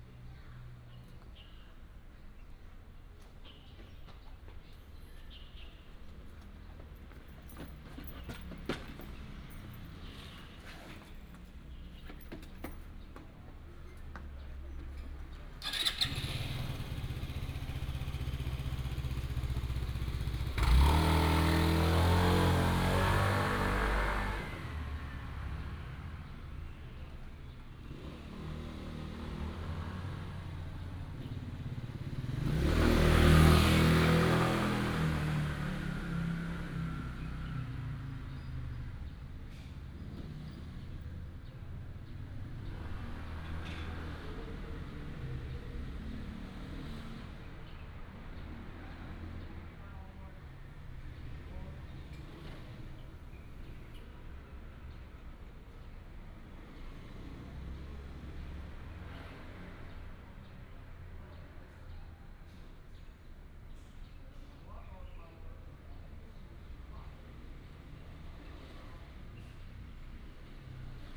Ln., Zhonghua Rd., Changhua City - In the alley
In the alley, Traffic sound, birds sound
18 March 2017, ~16:00